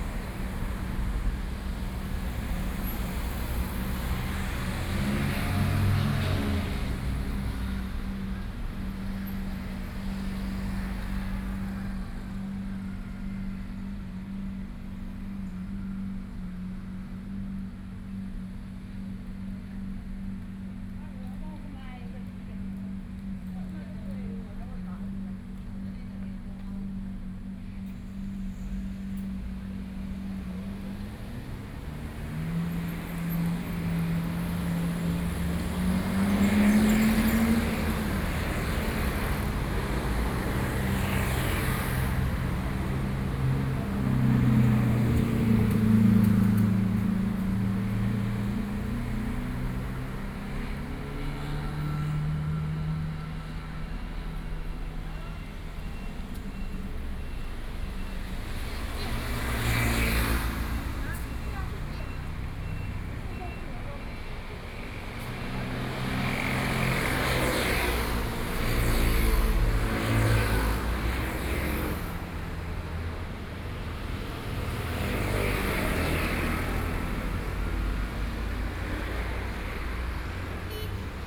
Beitou, Taipei - Night traffic
Night traffic, Sony PCM D50 + Soundman OKM II